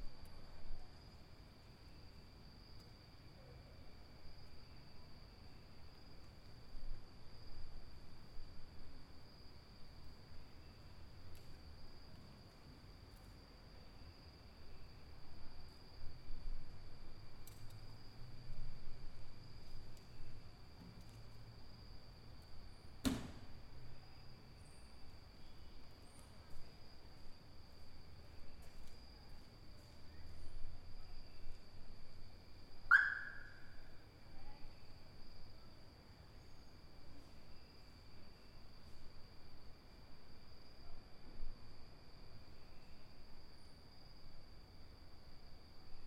Cra., Medellín, Belén, Medellín, Antioquia, Colombia - Noche
Un parqueadero casi sin vehículos, podría ser porque los dueños de estos bienes se encuentran
horrorizados por el mugre y suciedad que causa la caída de material orgánico por parte del bosque
que se encuentra al lado izquierdo de la foto.
4 September 2022, ~18:00